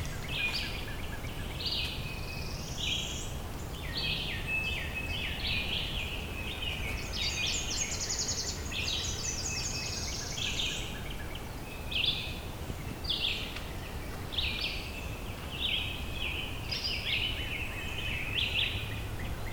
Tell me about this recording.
Encounter with two cows in the wood in Missouri, USA. Birds are singing. Sound recorded by a MS setup Schoeps CCM41+CCM8 Sound Devices 788T recorder with CL8 MS is encoded in STEREO Left-Right recorded in may 2013 in Missouri, USA.